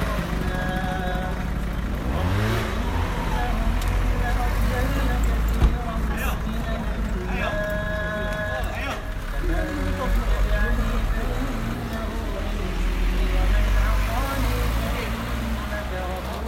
Grande Rue de Fès El Jdid, Fès, Morocco - Quartier juif
A busy road in the jewish neighbourbood of Fes, Morocco. It was prayer time, as the imam was calling the faithful to the mosque nearby. His song came out from the megaphones on the top of the minaret and reached far enough to not cross over with the song of other mosques further away. There was a portable kitchen in the corner of the road with someone cooking some kind of meat and made a big column of smoke that depending on the direction of the wind, made it very difficult to breathe for the pedestrians. Some people greeted to me as they saw me standing still recording.
28 March, ~17:00